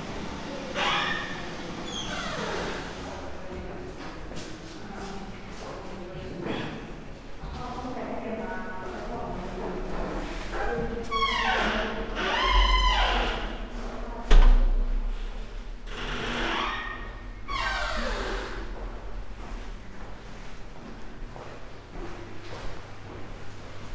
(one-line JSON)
{"title": "train station, Maribor - toilet doors, the gents", "date": "2014-06-20 19:19:00", "description": "public toilet ambience", "latitude": "46.56", "longitude": "15.66", "altitude": "271", "timezone": "Europe/Ljubljana"}